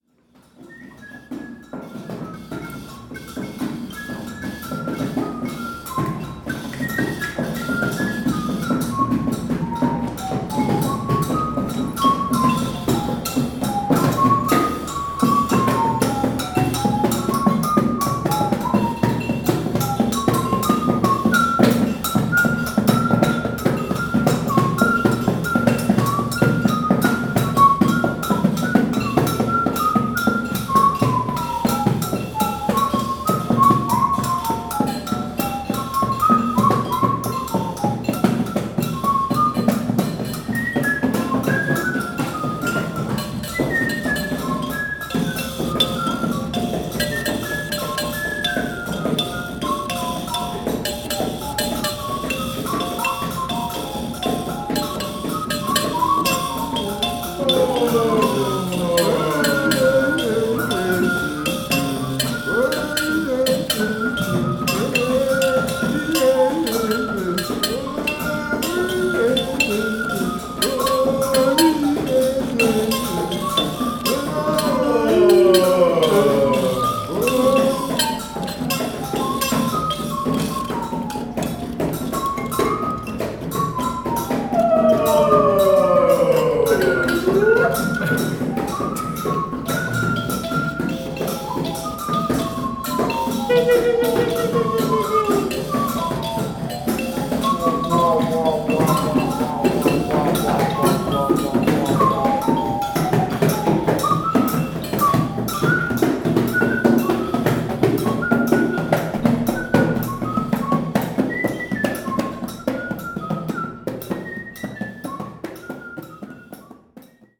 Harare South, Harare, Zimbabwe - FFGH-artists-impromptu
You are listening to an impromptu sound piece produced by a group of artists, poets, storytellers, and cultural producers participating in a workshop at First Floor Gallery Harare (FFGH). We edited it together in the open source software Audacity. FFGH is located in the inner city; traffic is dense, also online. So, we had to comfort ourselves with “dry” exercises via pdf’s, screenshots and screen-movies of online sites. The track had to be uploaded in nightshift to the All Africa Sound Map later.
The workshop was addressing the possibilities of sharing multimedia content online, introduced by radio continental drift.
The track is also archived here: